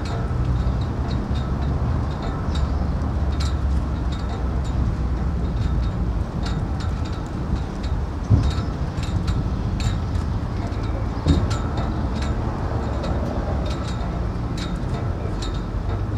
cables knocking against flagpoles at the one corner of maribor city football stadium, recorded through the fence.
Maribor, Slovenia, 14 June 2012, ~16:00